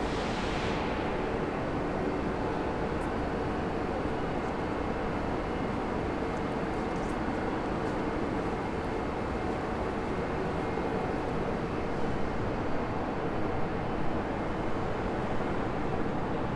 This recording starts at platform 18, where the international trains use to leave, but not half past three. Voices passes by, another beggar is asking for money, different voices are audible in different languages.